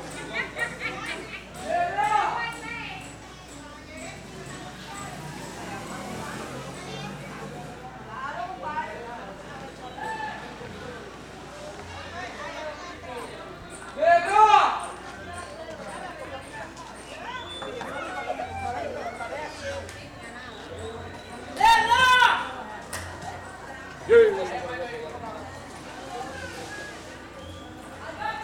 Santiago de Cuba, walking down calle Gallo